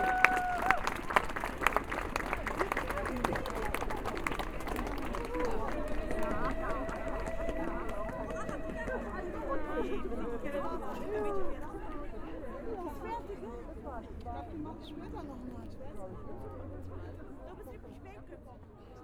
Katharinenstraße, Dortmund, Germany - onebillionrising, joining the dance...
...joining the dance… mics in my ears… good to see that quite a few men are joining the dance...
global awareness of violence against women